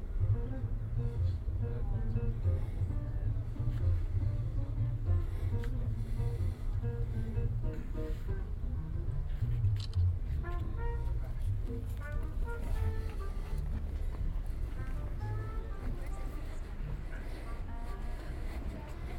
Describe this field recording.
Total time about 36 min: recording divided in 4 sections: A, B, C, D. Here is the second: B.